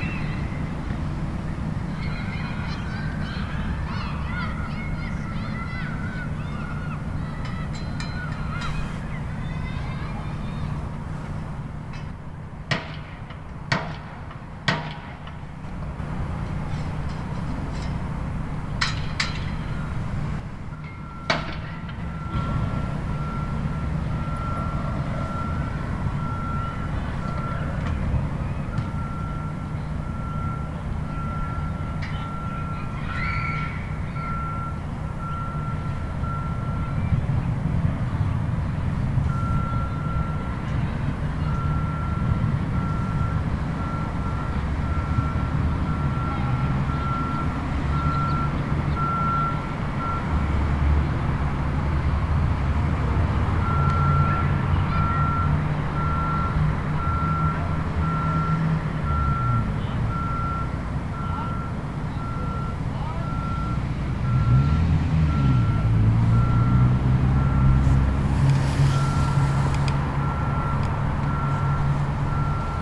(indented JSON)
{
  "title": "University Hill, Boulder, CO, USA - Playground",
  "date": "2013-02-14 04:47:00",
  "description": "Sitting at the playground after school, as a line of Hispanic children walk by and disappear",
  "latitude": "40.00",
  "longitude": "-105.27",
  "altitude": "1663",
  "timezone": "America/Denver"
}